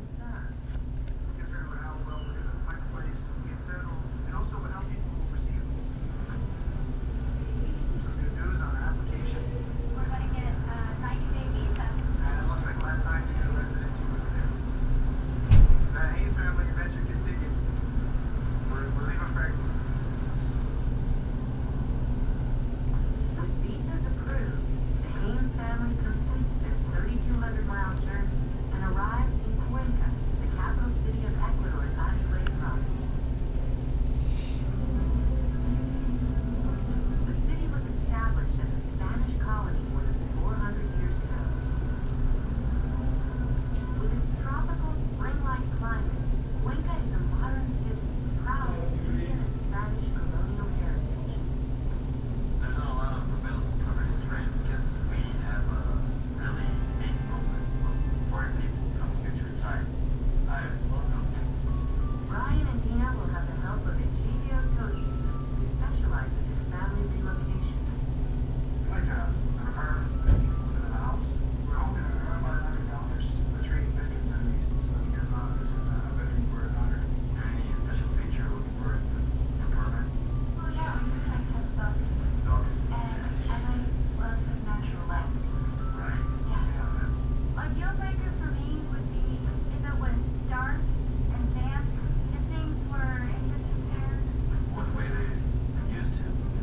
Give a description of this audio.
Quiet, carpeted room. Television on in the background. Stereo mic (Audio-Technica, AT-822), recorded via Sony MD (MZ-NF810).